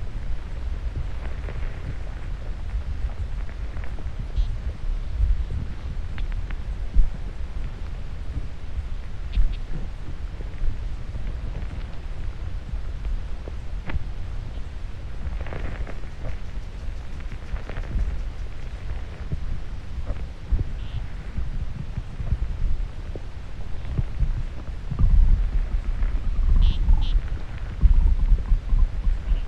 Exploración nocturna con hidrófonos de la balsa próxima a El Vilar, en la que habitan una gran cantidad de anfibios.